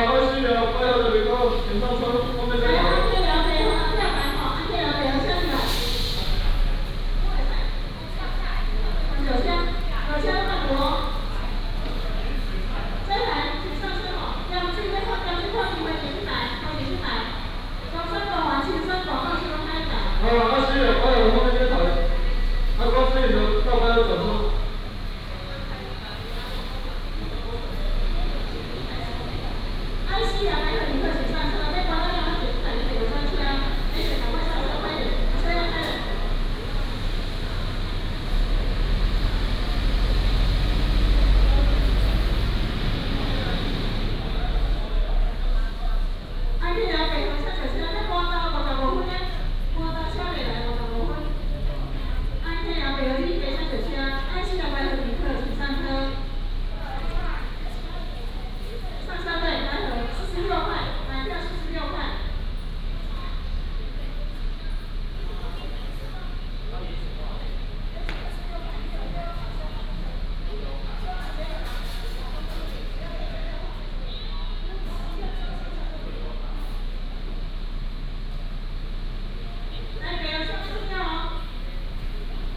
新營客運新營站, Xinying Dist. - In the lobby of the passenger station
At the passenger terminal, Traffic sound, Station broadcasting
Xinying District, Tainan City, Taiwan, 31 January, 15:27